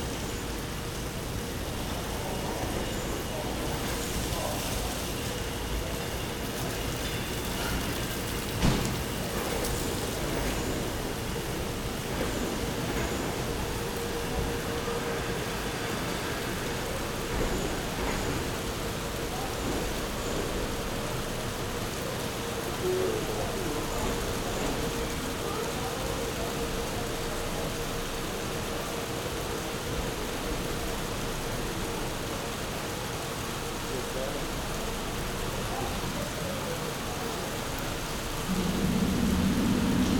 Gyumri, Arménie - Gyumri railway station
Into the Gyumri station, rain falling. A train is coming from Erevan and is going to Batumi (Georgia) and after, the Gyumri-Erevan train is leaving. Into the Gyumri station, announcements are loud, and the time is very-very long ! Everything is slow. It's a forbidden sound. The station master went 4 times to see me and was aggressive. At the end, I had to leave.
Gyumri, Armenia, 9 September 2018